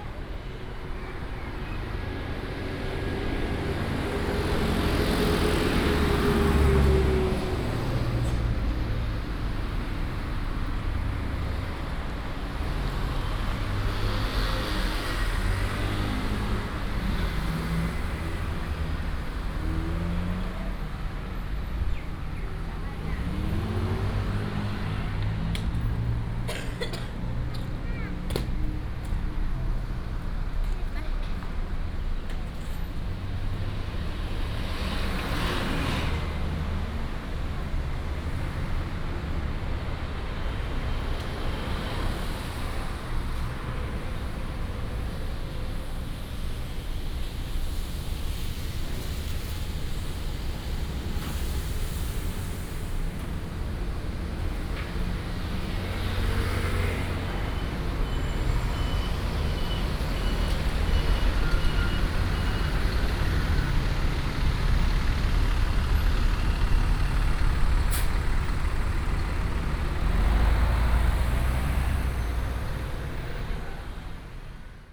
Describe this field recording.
Walking on the road, Traffic sound, The town, Bird calls